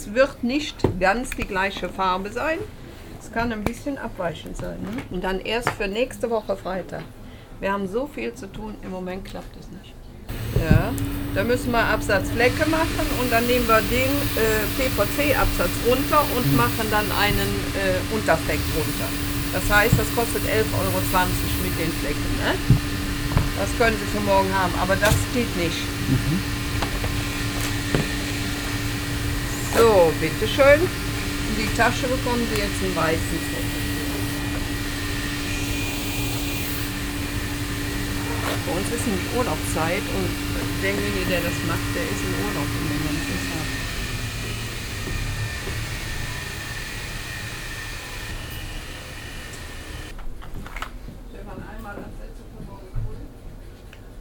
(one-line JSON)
{"title": "refrath, siebenmorgen, schuster", "description": "beim schuster, morgens - kundengespräch und arbeitsgeräusche von maschinen\nsoundmap nrw:\nsocial ambiences/ listen to the people - in & outdoor nearfield recording", "latitude": "50.96", "longitude": "7.11", "altitude": "76", "timezone": "GMT+1"}